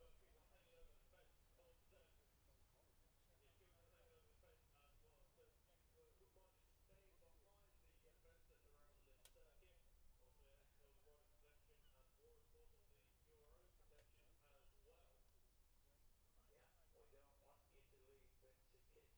Jacksons Ln, Scarborough, UK - olivers mount road racing ... 2021 ...
bob smith spring cup ... 600cc group A and B qualifying ... dpa 4060s to MixPre3 ...
May 2021